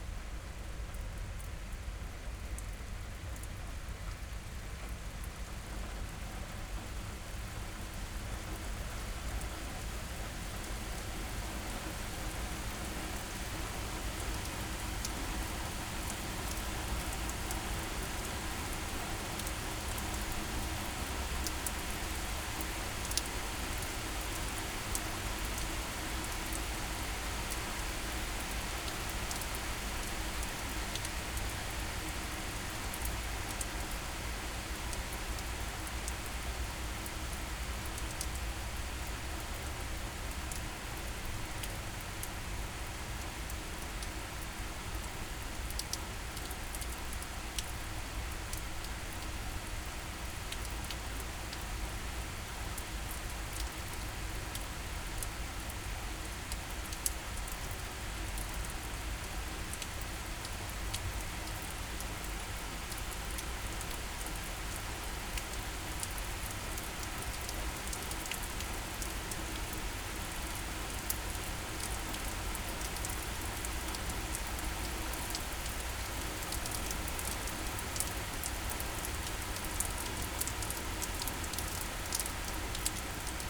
Pekrska gorca is a small chapel on a hill with a nice view over Maribor. the hum of the city can be heard everywhere here, the hills around town seem to reflect the noise of the city. suddenly it started to rain.
(SD702 DPA4060)
Maribor, Slovenia